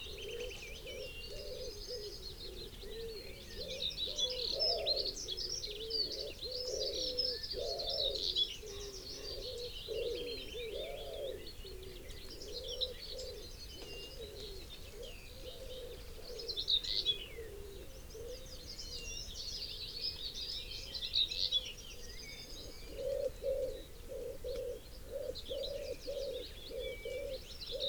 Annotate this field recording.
Chalk pit soundscape ... bird calls and song ... wood pigeon ... willow warbler... yellowhammer ... pheasant ... goldfinch ... blackbird ... linnet ... whitethroat ... binaural dummy head ... background noise ...